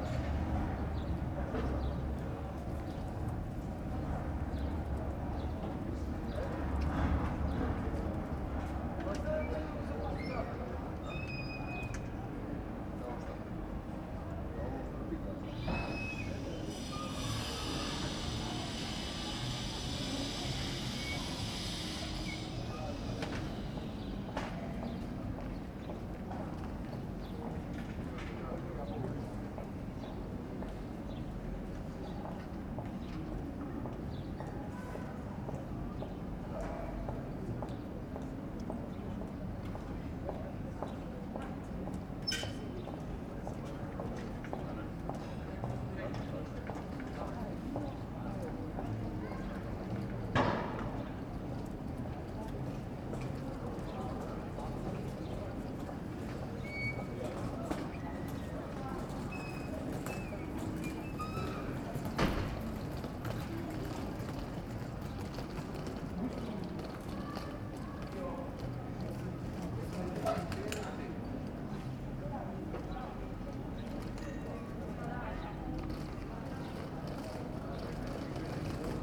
Knežev dvor, Kotor, Montenegro - Historic Kotor entrance
Pedestrians talking. A worker moves a noisy wagon.
Voix de piétons. Une personne déplace un chariot.